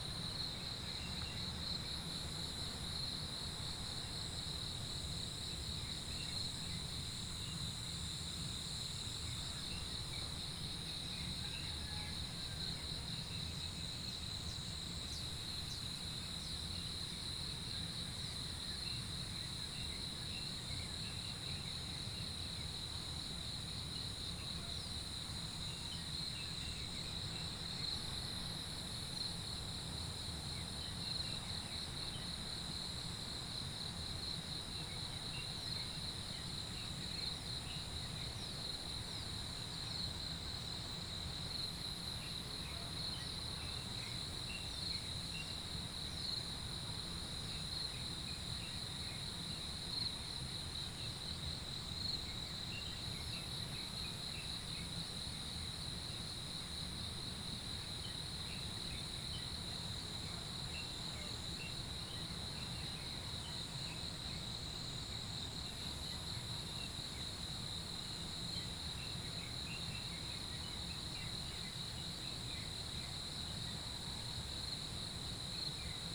{"title": "水上巷, 南投桃米里, Taiwan - early morning", "date": "2016-06-08 05:02:00", "description": "early morning, Next to the river, Insects sounds, Chicken sounds", "latitude": "23.94", "longitude": "120.92", "altitude": "476", "timezone": "Asia/Taipei"}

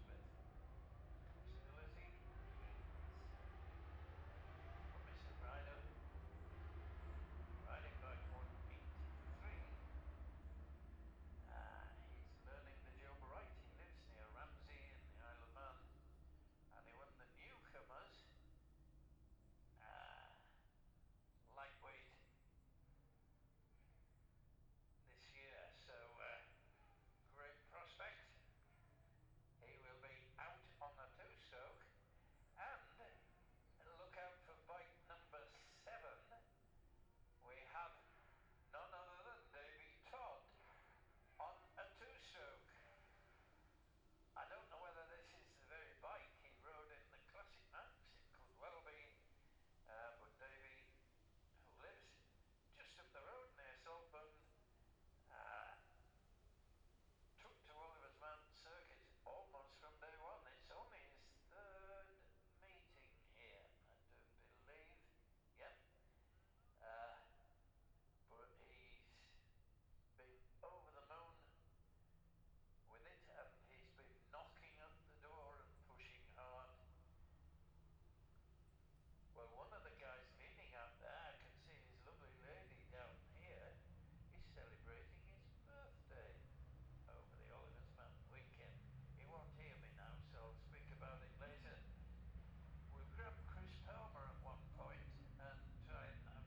{"title": "Jacksons Ln, Scarborough, UK - goldcup 2022 ... pre-race ... newcomers laps ...", "date": "2022-09-16 09:23:00", "description": "the steve hensaw gold cup 2022 ... pre race ... newcomers laps ... dpa 4060s on t-bar on tripod to zoom f6 ...", "latitude": "54.27", "longitude": "-0.41", "altitude": "144", "timezone": "Europe/London"}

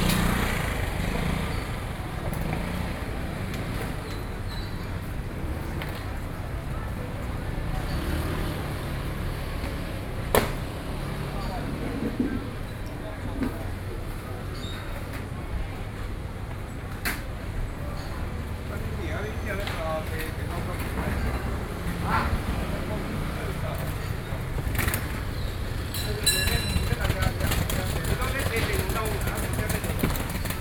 {"title": "Xichang St., Wanhua Dist., Taipei City - SoundWalk", "date": "2012-11-10 15:37:00", "latitude": "25.04", "longitude": "121.50", "altitude": "12", "timezone": "Asia/Taipei"}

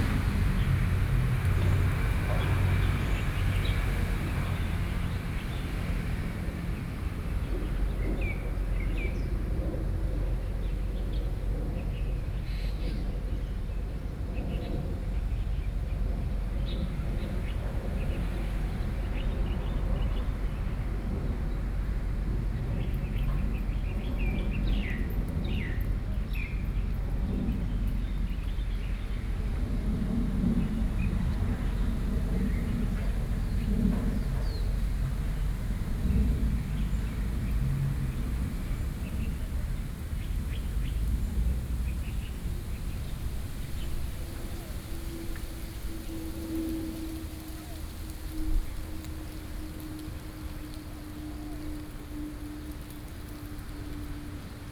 {"title": "Shin Shing Park, Taipei City - One Day", "date": "2013-07-18", "description": "On July 18 this day, selected a small community park for 24 hours of sound recordings.\nRecording mode to record every hour in the park under the environmental sounds about ten minutes to complete one day 24 (times) hours of recording, and then every hour of every ten minutes in length sound, picking them one minute, and finally stick connected 24 times recording sound data, the total length of time will be 24 minutes.Sony PCM D50 + Soundman OKM II, Best with Headphone( For 2013 World Listening Day)", "latitude": "25.14", "longitude": "121.49", "altitude": "12", "timezone": "Asia/Taipei"}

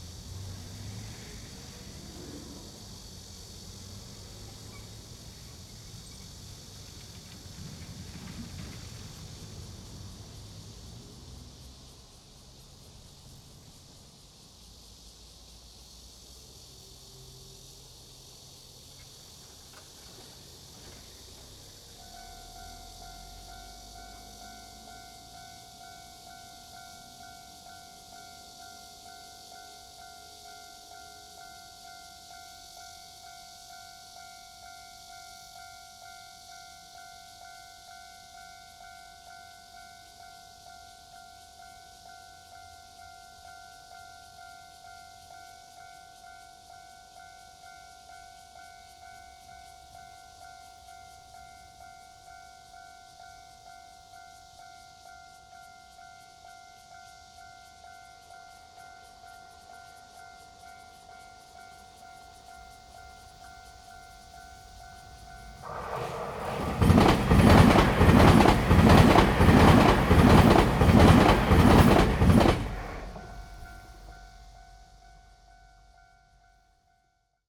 {"title": "Jiadong Rd., Bade Dist. - the ground 3", "date": "2017-07-18 15:59:00", "description": "Next to the railroad track, Cicada and Traffic sound, for World Listening Day 2017\nZoom H2n MS+XY", "latitude": "24.98", "longitude": "121.28", "altitude": "104", "timezone": "Asia/Taipei"}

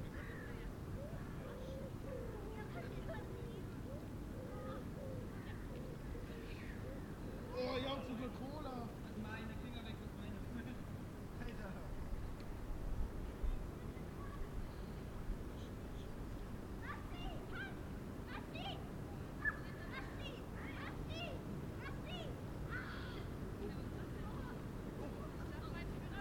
{"title": "Langel Rheinufer, Köln, Deutschland - Zum ersten mal im Jahr im Sand am Rhein / First Time this year in the sand of the River Rhine", "date": "2014-03-09 16:10:00", "description": "Einige Jugendliche versammeln sich das erste Mal im Jahr im Sand am Ufer des Rheins. Ein Hund bellt im Hintergrund. Motorengeräusche in der Ferne. Fahrräder und Stimmen hinter mir.\nSome teens gather for the first time in the sand on the banks of the Rhine. A dog barks in the background. Engine noise in the distance. Bicycles and voices behind me.", "latitude": "50.85", "longitude": "7.00", "timezone": "Europe/Berlin"}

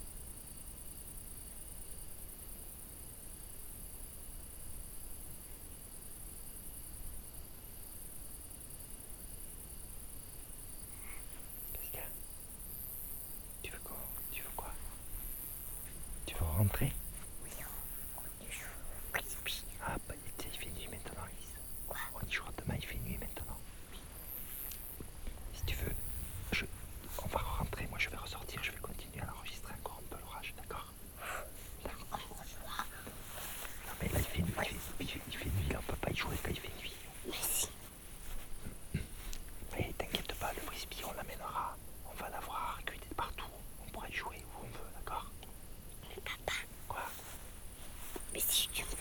{"title": "Boulaur - Ulysse and the storm", "date": "2010-08-13 22:40:00", "description": "avec mon fils enregistrant un orage dans la campagne\nZoom h4 / micro oreillettes soundman", "latitude": "43.54", "longitude": "0.76", "altitude": "192", "timezone": "Europe/Paris"}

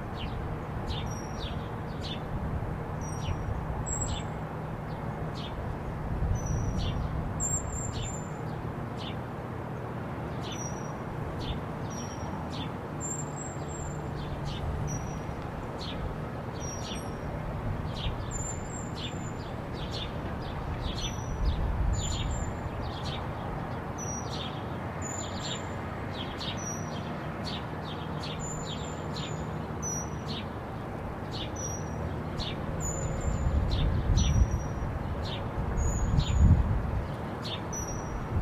{"title": "Gopher + Bird Song, Fishmarket Studios, Calgary", "date": "2011-06-05 04:08:00", "description": "bird and gopher medley near Fishmarket Studios in Calgary", "latitude": "51.05", "longitude": "-114.05", "altitude": "1043", "timezone": "Canada/Mountain"}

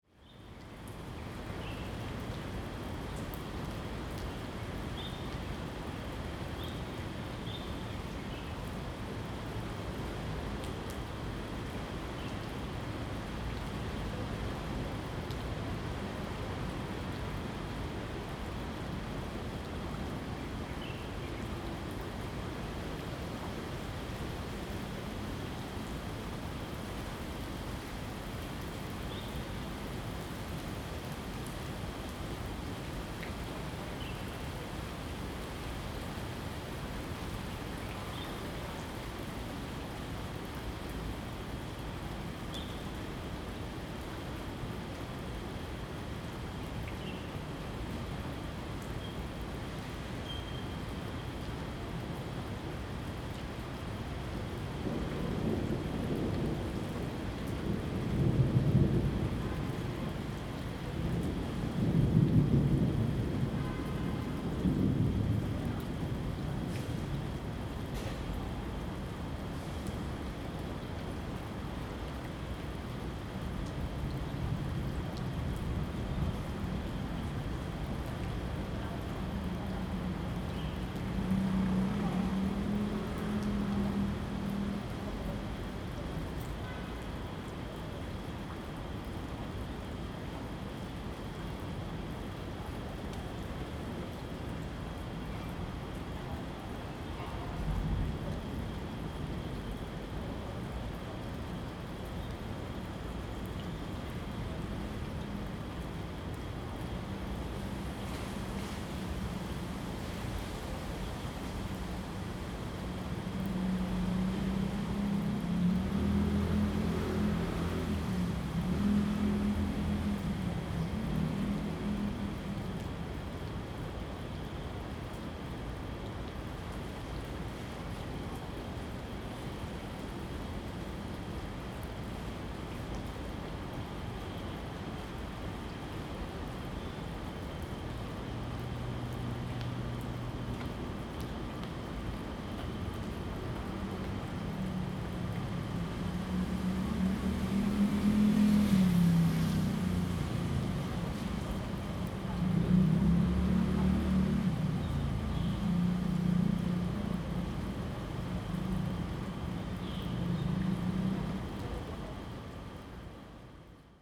{"title": "安祥公園, 大安區, Taipei City - in the Park", "date": "2015-07-30 16:10:00", "description": "After the thunderstorm, Bird calls, Traffic Sound\nZoom H2n MS+XY", "latitude": "25.03", "longitude": "121.54", "altitude": "19", "timezone": "Asia/Taipei"}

